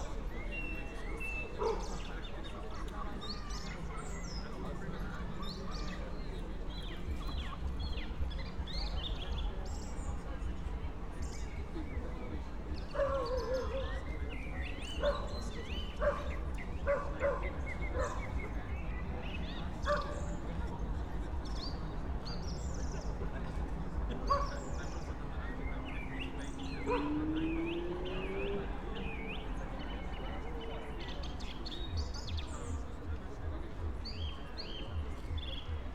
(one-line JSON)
{"title": "Brno, Lužánky - park ambience", "date": "2021-05-26 20:45:00", "description": "20:45 Brno, Lužánky\n(remote microphone: AOM5024/ IQAudio/ RasPi2)", "latitude": "49.20", "longitude": "16.61", "altitude": "213", "timezone": "Europe/Prague"}